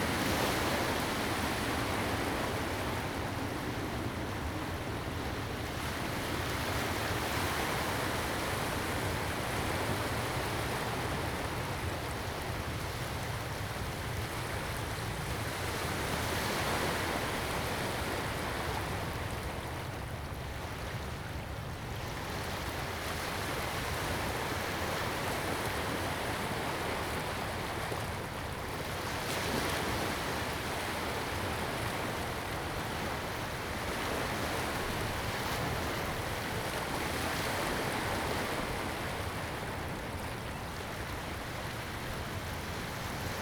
淡水區崁頂里, New Taipei City - at the seaside
at the seaside, Sound waves, Aircraft flying through
Zoom H2n MS+XY
Tamsui District, New Taipei City, Taiwan, 5 April 2016, ~17:00